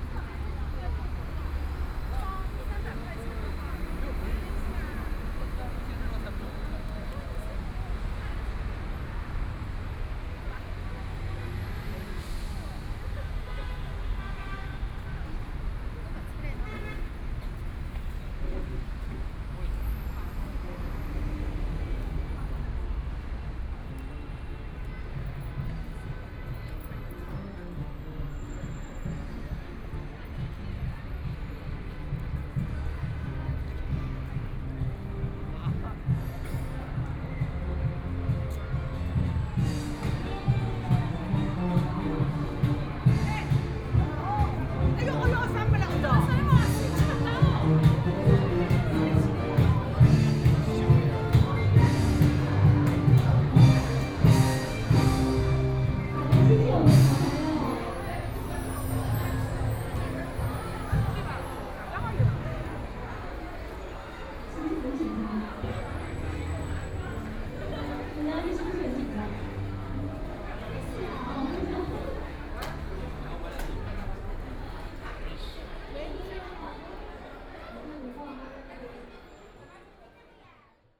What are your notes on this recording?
From the street to go into the shopping center, Traffic Sound, Crowd, Binaural recording, Zoom H6+ Soundman OKM II